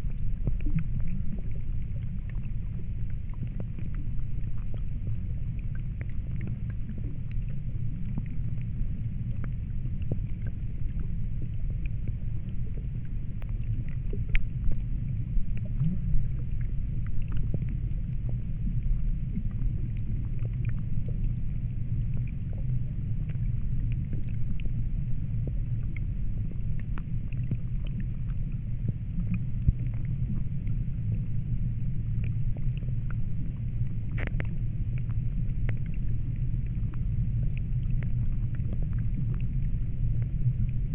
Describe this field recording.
first ice on a flooded meadow. listening through contact microphones